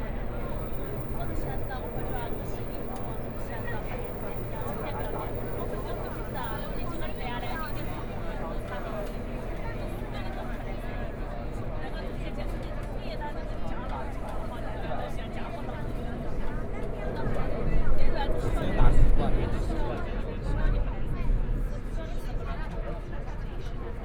Taipei, Taiwan - Tamsui Line (Taipei Metro)
from Shuanglian station to Chiang Kai-shek Memorial Hall station, Binaural recordings, Zoom H4n+ Soundman OKM II